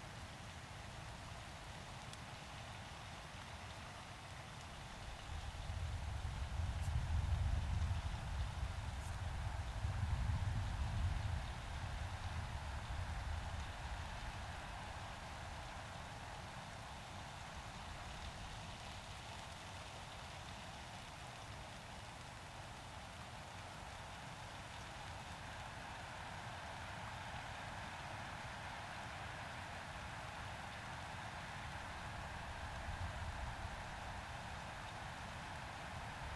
Rain and wind in our tent - Right next to the river Caldew
Recorded using LOM Mikro USI's and a Sony PCM-A10.